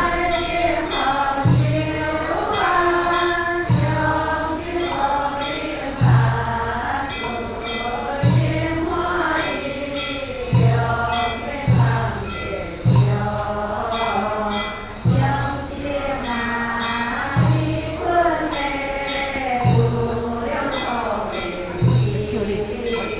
Longshan Temple, 台北市, Taiwan - Chant Buddhist scriptures
Taipei City, Taiwan